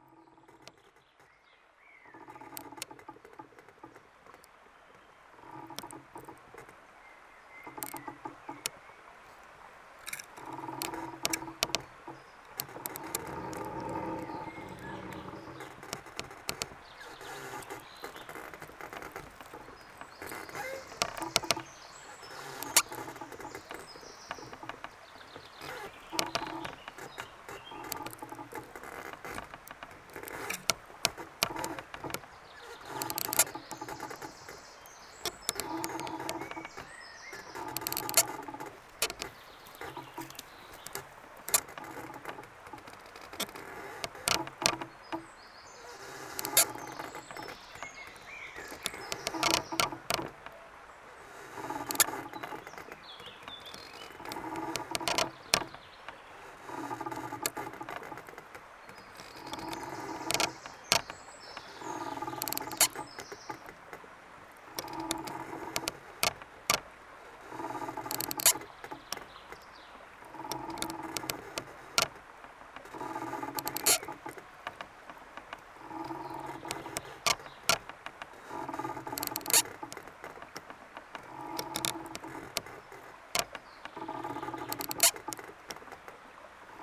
Oberwampach, Luxembourg - Spruce crunches
Two collapsed spruce trees, posed on a big alive spruce. The very small wind makes some quiet crunches on the barks.
1 July 2018, 10:40